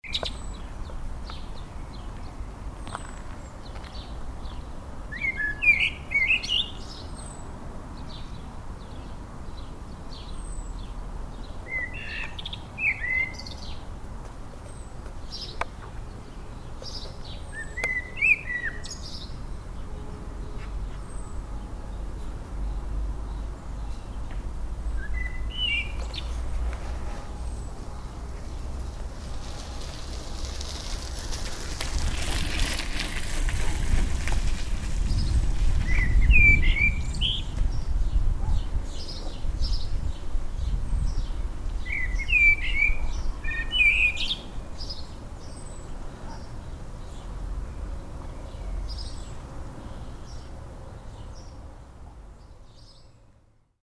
flintsbach, evening bird - flintsbach, evening bird, bicycle passing
recorded june 6, 2008. - project: "hasenbrot - a private sound diary"